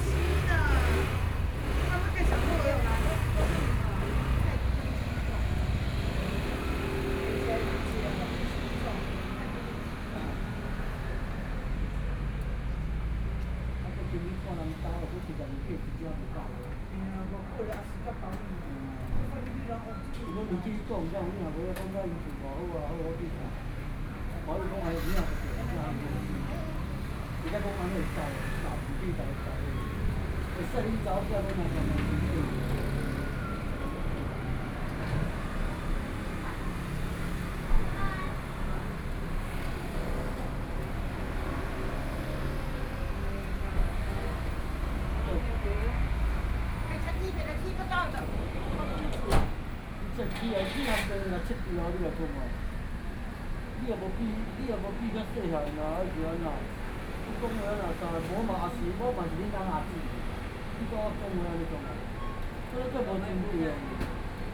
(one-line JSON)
{"title": "Fuji Rd., Hualien City - In front of the convenience store", "date": "2014-08-27 20:06:00", "description": "In front of the convenience store, Traffic Sound, Chat, Fighter flying through\nBinaural recordings", "latitude": "23.99", "longitude": "121.60", "altitude": "18", "timezone": "Asia/Taipei"}